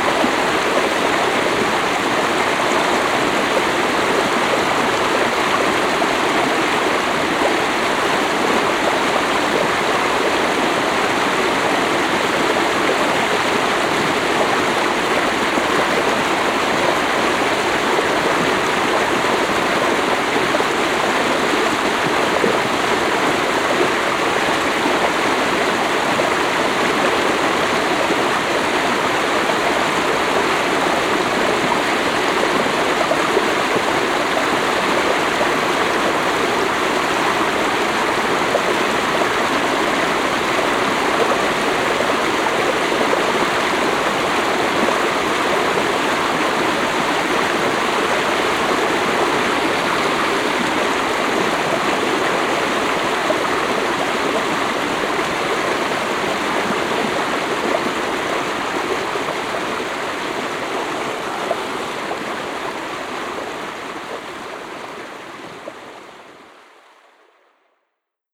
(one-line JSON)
{"title": "Sveio, Norwegen - Norway, Holsvik, small stream", "date": "2012-07-20 17:00:00", "description": "On a steep hill in a forest. The sound of a vivid small stream with fresh cold water.\ninternational sound scapes - topographic field recordings and social ambiences", "latitude": "59.70", "longitude": "5.54", "altitude": "24", "timezone": "Europe/Oslo"}